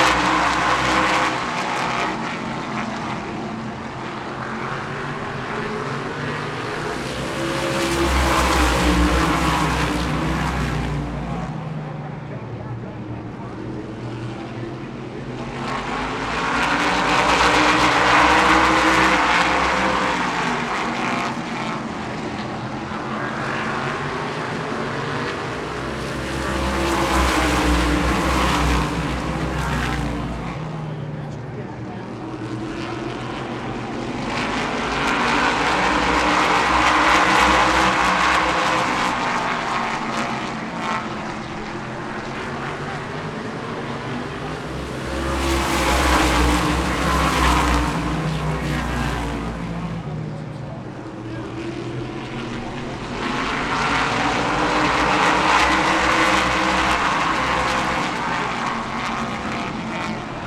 Stafford Speedway - Open Modified Heat Races
Heat Races for the upcoming 81 lap open modified race